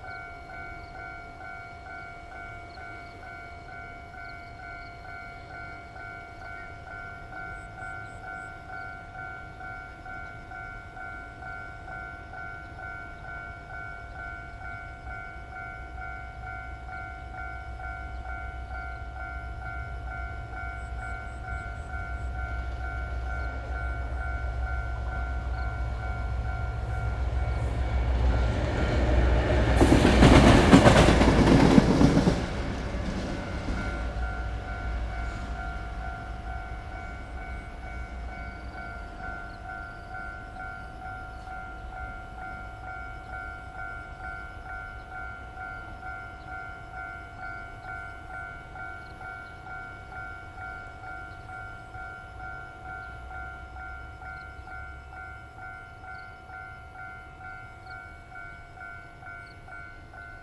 Xuejin Rd., Wujie Township - At railroad crossing
At railroad crossing, Close to the track, Traffic Sound, Trains traveling through
Zoom H6 MS+ Rode NT4
Yilan County, Taiwan, 25 July 2014, 18:06